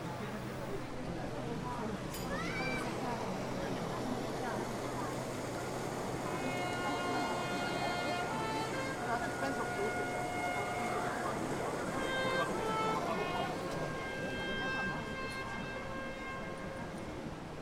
Main Square, Kraków
Soundmark of Kraków, Hejnal Mariacki - the trumpet melody played from the tower commemorates the medieval history of XIII century battles in defense of the town.
Kraków, Poland, 15 August 2011, 12:00